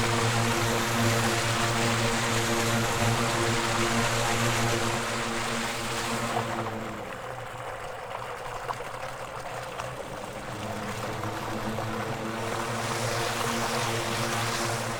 Unnamed Road, Czechia - waterstream winter
Sedimentation lakes of Počerady Power plant.